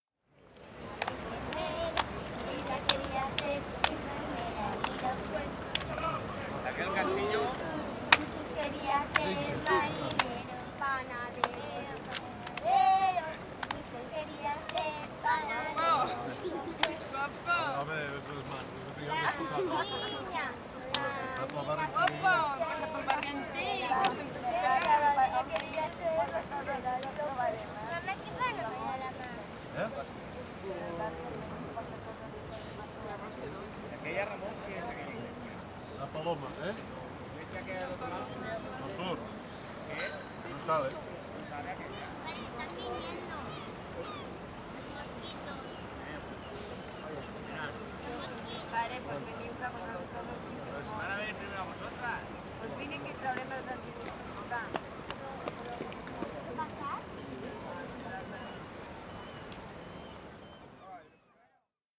Cais da Estiva, Porto, Portugal - Cais da Estiva, Porto, turistas
Turistas no Cais da Estiva, Ribeira, Portugal. Mapa Sonoro do rio Douro. Singing in Ribeira, Porto. Douro River Sound Map
28 August 2011